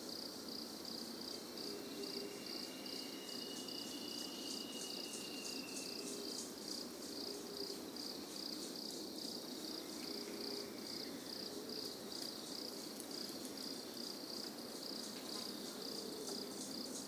Parque da Cantareira - Núcleo do Engordador - Trilha da Mountain Bike - v
Register of activity